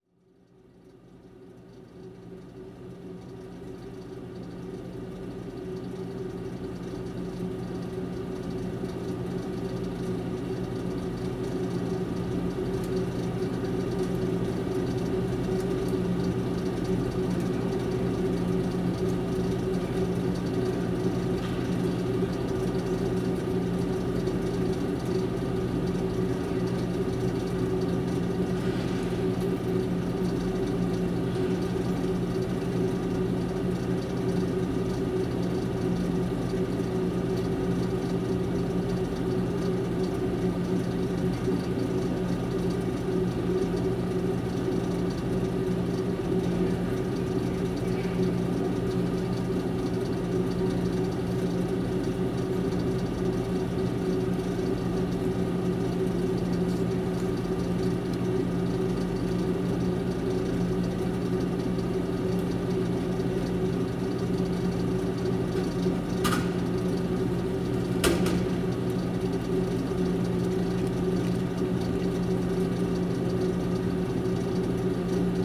Muhlenberg College, West Chew Street, Allentown, PA, USA - Machine in the Basement of the Baker Center for the Arts
The sound of a machine behind closed doors in the basement of the Muhlenberg College Baker Center for the Arts.